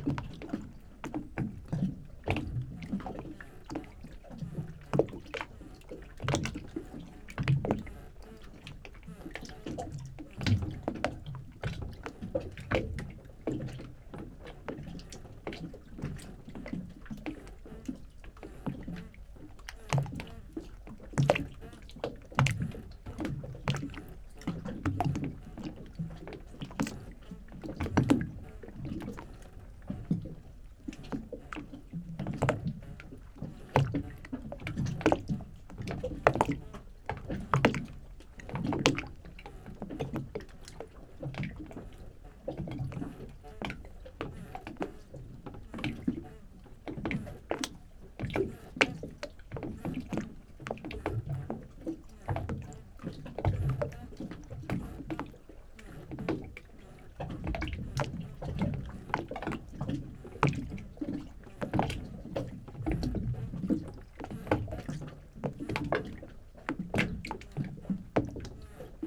Polymer wharf at Hwapo Maeul 화포 마을 부두
...Lunar New Year...mid-winter night...remarkably quiet Korea
25 January 2020, 23:00